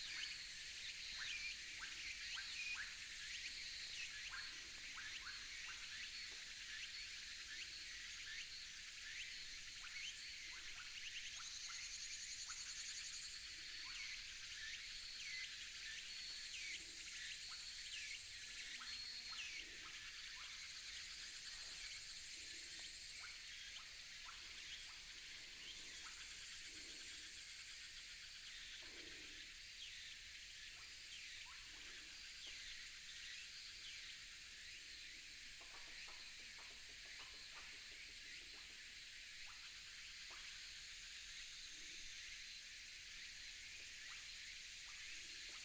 竹25鄉道, Qionglin Township - Evening mountain
sound of birds, Insects sound, Evening mountain, Binaural recordings, Sony PCM D100+ Soundman OKM II
15 September 2017